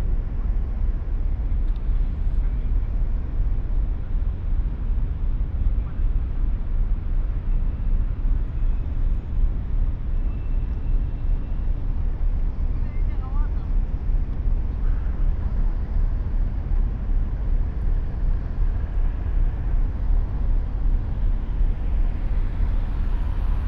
Athen, Piräus, harbour - ship horn and drone of leaving cruise liner
two cruise liners blow their horns, while one is leaving the harbour at Piraeus. Deep drone of diesel engines, city hum.
(Sony PCM D50, Primo EM172)
Pireas, Greece, 5 April 2016, 21:20